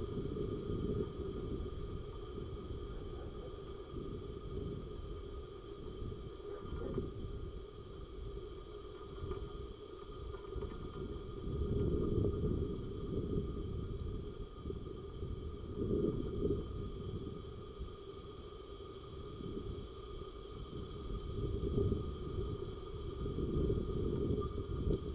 Atkočiškės, Lithuania, in empty beer can